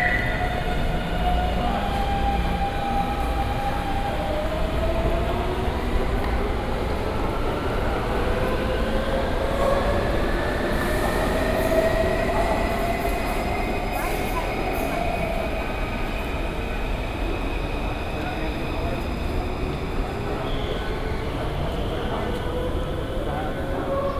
Xinpu Station, New Taipei City - in the MRT station